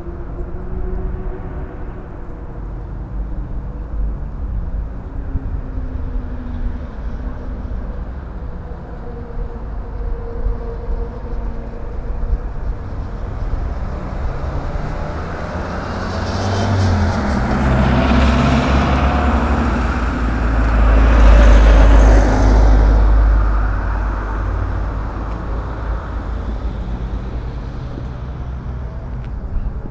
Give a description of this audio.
Dagneux, Chemin des Irandes. By JM Charcot